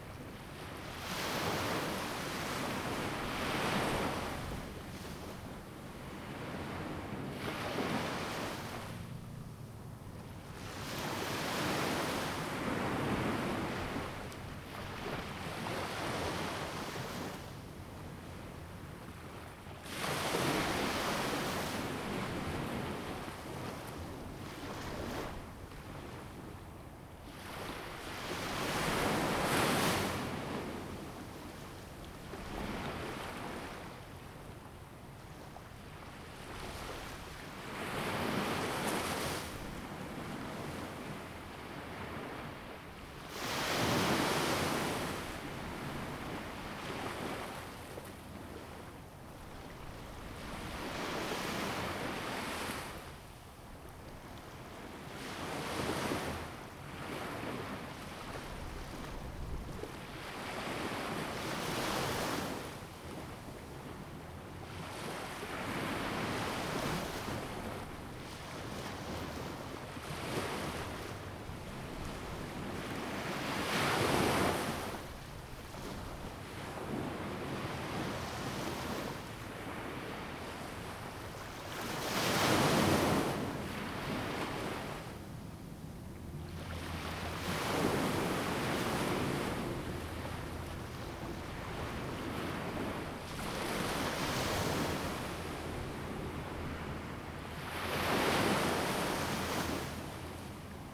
三芝區後厝里, New Taipei City - Beach
Sound of the waves, Beach
Zoom H2n MS+XY